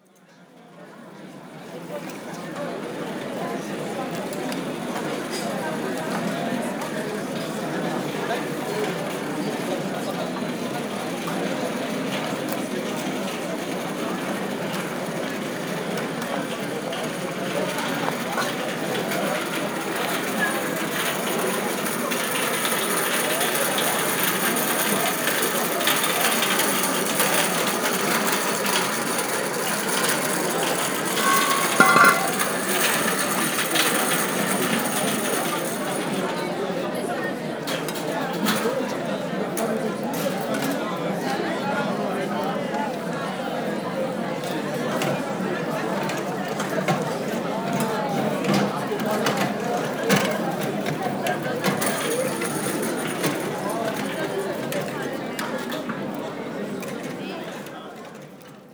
lyon - biennale d'art contemporain, soiree des artistes
Lyon, France